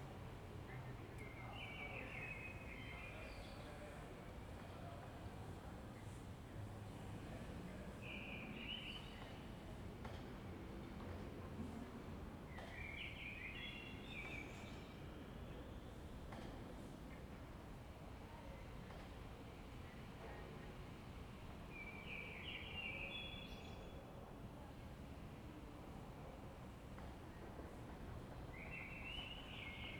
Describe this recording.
"Round five p.m. terrace with violin, bells and barking Lucy in the time of COVID19": soundscape. Chapter CLXXIV of Ascolto il tuo cuore, città. I listen to your heart, city, Wednesday, May 20th, 2021. Fixed position on an internal terrace at San Salvario district Turin. A violin is exercising in the south, shortly after 5 p.m. the bells ring out and Lucy barks and howls, as is her bad habit. More than one year and two months after emergency disposition due to the epidemic of COVID19. Start at 4:53: p.m. end at 5:24 p.m. duration of recording 30’43”